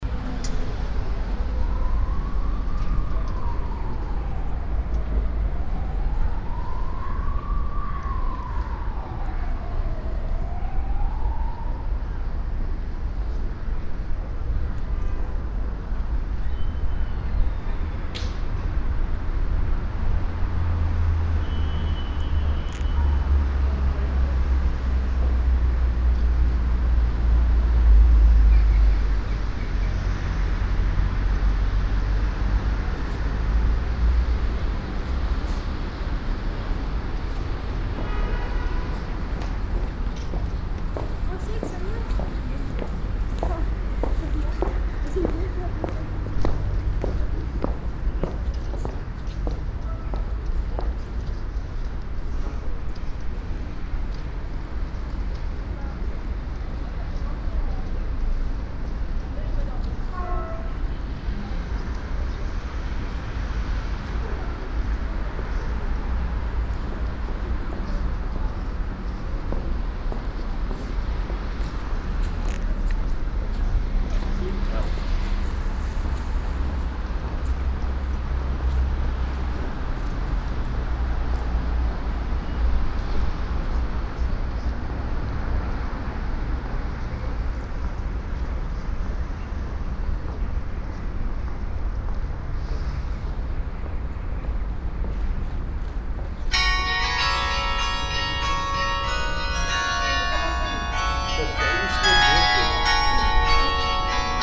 Standing in front of the Cathedrale Notre Dame. The sound of the passing by traffic and steps on the stairway to the church - hen the sound of the hour bell play of the church.
international city scapes and topographic field recordings